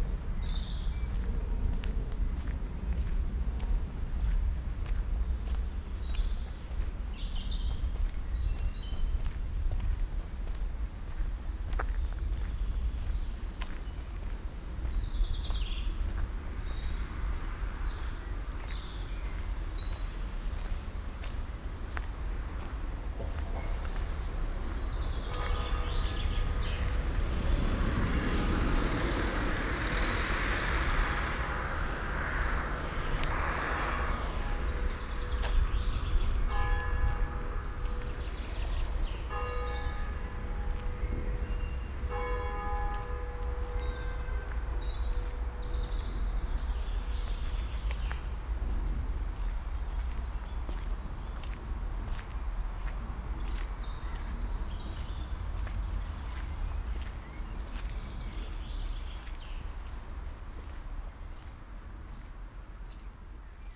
früher abend, gang durch kleinen wald zu brücke über kleinen fluss, flugzeugüberflug, kirchglocken
soundmap nrw - social ambiences - sound in public spaces - in & outdoor nearfield recordings